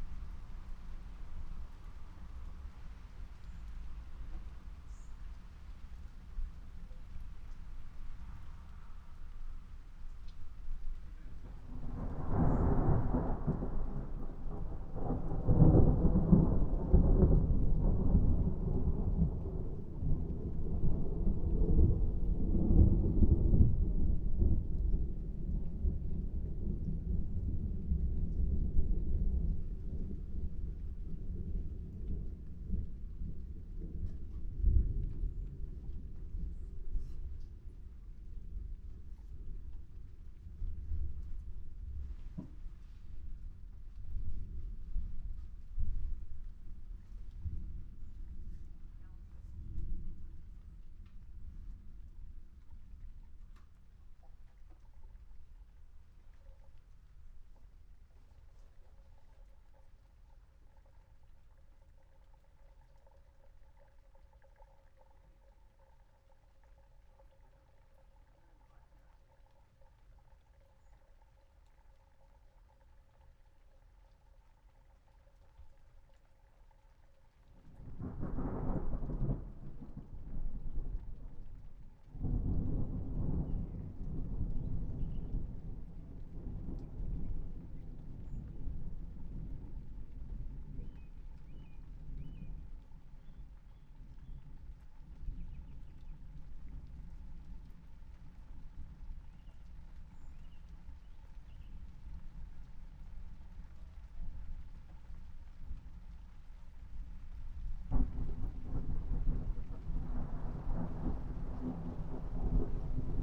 Chapel Fields, Helperthorpe, Malton, UK - thunderstorm ...
thunderstorm ... SASS on tripod to Zoom F6 ... voices ... water percolating down pipes ... the ducks ... again ... song thrush song ... really like this excerpt ...